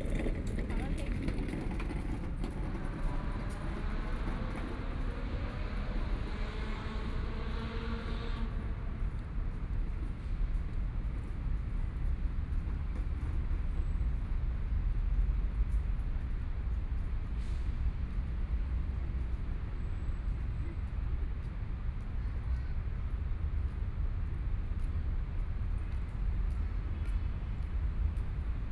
林森公園, Taipei City - Night in the park
Night in the park, Traffic Sound
Please turn up the volume
Binaural recordings, Zoom H4n+ Soundman OKM II
Zhongshan District, Taipei City, Taiwan